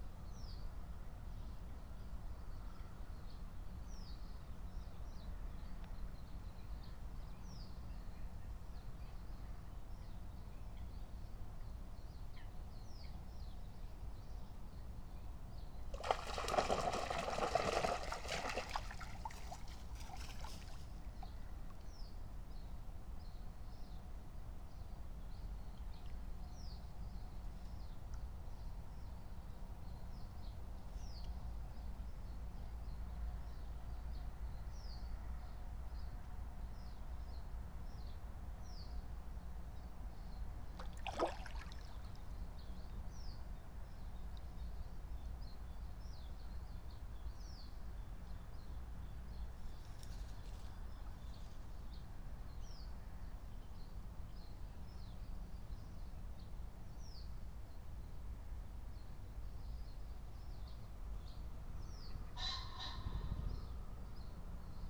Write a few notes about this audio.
05:00 Berlin Buch, Lietzengraben - wetland ambience